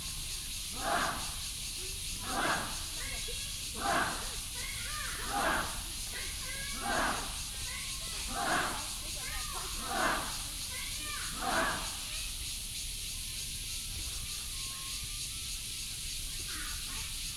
{
  "title": "龍岡森林公園, Zhongli Dist., Taoyuan City - healthy gymnastics",
  "date": "2017-07-26 06:22:00",
  "description": "in the Park, Many elderly people doing health exercises, Cicada cry, Birds sound, traffic sound",
  "latitude": "24.93",
  "longitude": "121.24",
  "altitude": "170",
  "timezone": "Asia/Taipei"
}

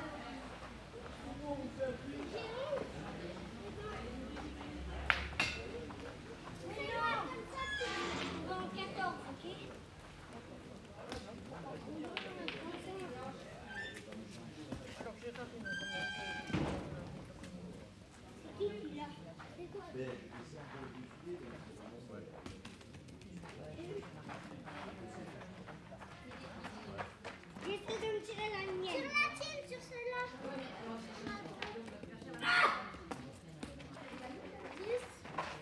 {
  "title": "Sigale, Frankreich - Sigale, Alpes-Maritimes - Two boys playing Petanque",
  "date": "2014-08-18 12:54:00",
  "description": "Sigale, Alpes-Maritimes - Two boys playing Petanque.\n[Hi-MD-recorder Sony MZ-NH900, Beyerdynamic MCE 82]",
  "latitude": "43.87",
  "longitude": "6.96",
  "altitude": "620",
  "timezone": "Europe/Paris"
}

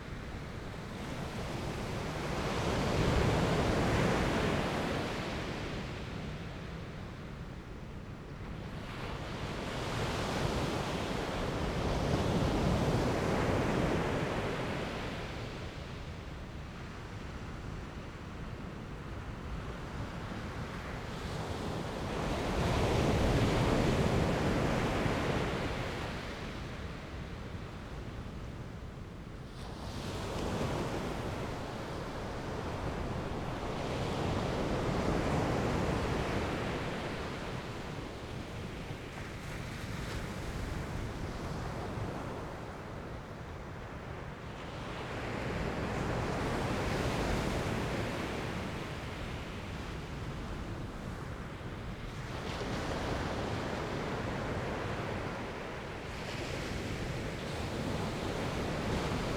{
  "title": "Grève rose, Trégastel, France - Peacefull high tide [Grève rose]",
  "date": "2019-04-22 20:00:00",
  "description": "Soirée. Vagues calmes pendant la marée haute.\nEvening. Peacefull waves during the high tide.\nApril 2019.",
  "latitude": "48.83",
  "longitude": "-3.53",
  "timezone": "Europe/Paris"
}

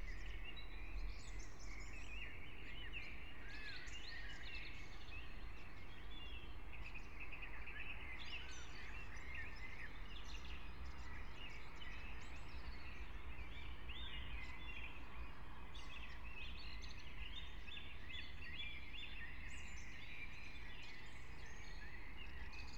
{
  "title": "Brno, Lužánky - park ambience",
  "date": "2021-04-18 04:15:00",
  "description": "04:15 Brno, Lužánky\n(remote microphone: AOM5024/ IQAudio/ RasPi2)",
  "latitude": "49.20",
  "longitude": "16.61",
  "altitude": "213",
  "timezone": "Europe/Prague"
}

